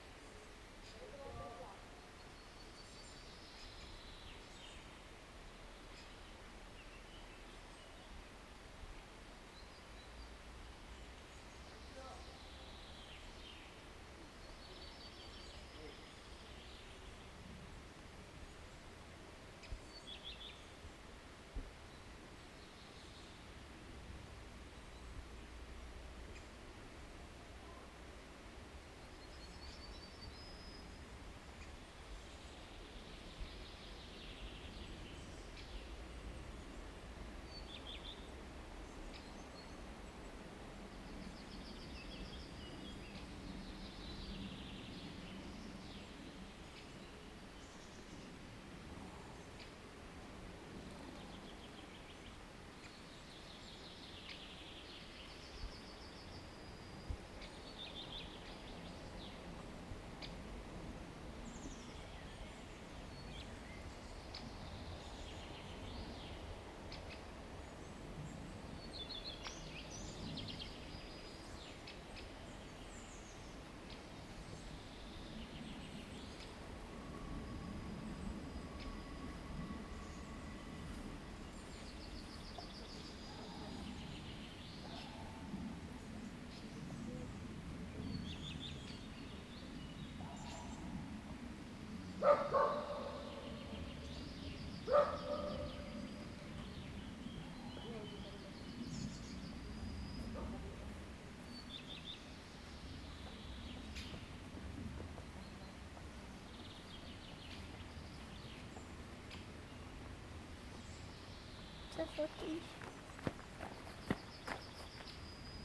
Mokrovraty, Mokrovraty, Česko - Forest settlement
A forest settlement with cottages and weekend-houses. Birds singing, human voices and footsteps, dog barking.
Zoom H2n, 2CH, handheld.